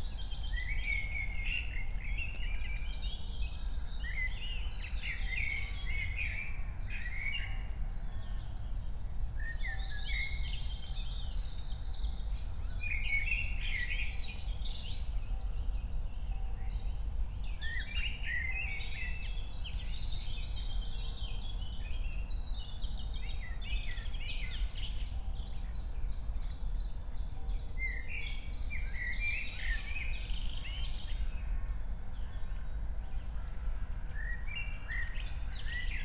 8:00 bells, frog, crows and others